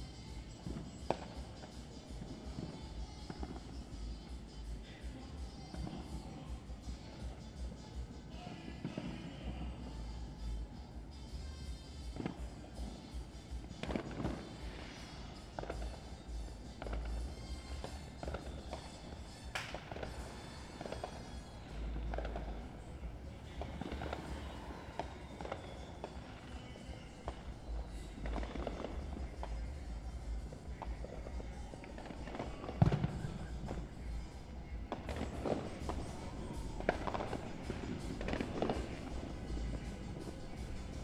George St, Flushing, NY, USA - Ridgewood neighborhood celebrating the 4th of July.
Ridgewood neighborhood celebrating the 4th of July.
New York, USA, 4 July 2019